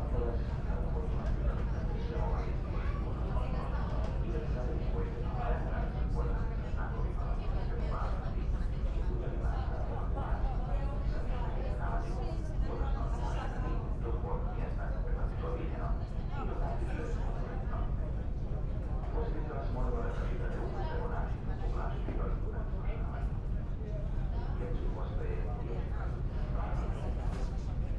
MotorBoatMarcoPolo Veranda
Motor Boat Marco Polo Rijeka